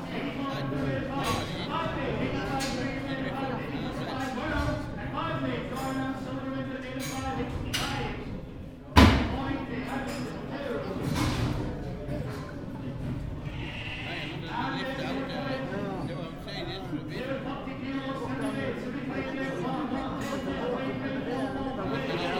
Sheep Auction, Kirkby Stephen, Cumbria, UK - Sheep auction at Kirkby Stephen auction mart
This was a recording I made in Cumbria in early 2012 for the exhibition I was making for Rheged's 'Wonder of Wool' exhibition. It features the sounds of a live sheep auction. The loud punctuating sounds are what looked like a huge cow shin bone being used as a gaffle. As you can hear, the proceedings are amplified, and the speed of the auction is impressive. I couldn't follow what was happening at all! Recorded rather craftily with Naiant X-X microphones pinned on the outside of a rucksack which I just dumped on the floor in front of me. Not great quality but gives some sense of the rhythm and pace at one of these events.